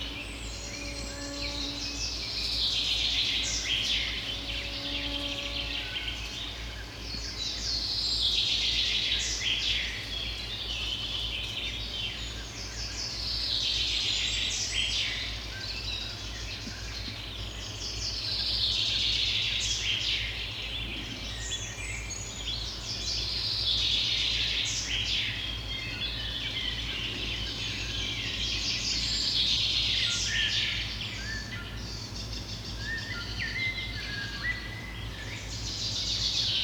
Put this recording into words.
Small island on the Volga river. Massive mosquitos attack. Birds singing contest. Frogs and reptiles moving in the grass. Recorded with Tereza Mic System - Zoom F6